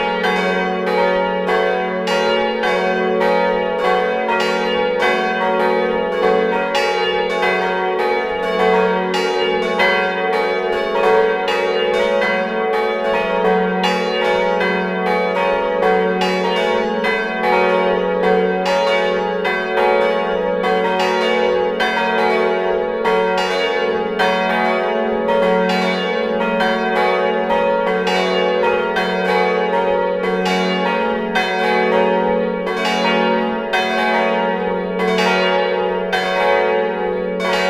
La Hulpe, Belgique - La Hulpe bells
La Hulpe bells, ringed manually with ropes. It's a very good ensemble of bells, kept in a good state by a passionnate : Thibaut Boudart. Thanks to him welcoming us in the bell tower.